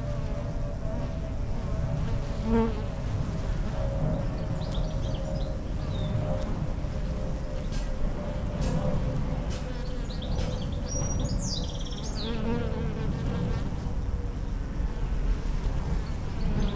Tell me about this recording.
A hive of bees in the back yard at Cambria Rd. Bees, schoolyard, birds, planes. A hot day after morning storms. WLD 2014. Roland R-9 with electret stereo omnis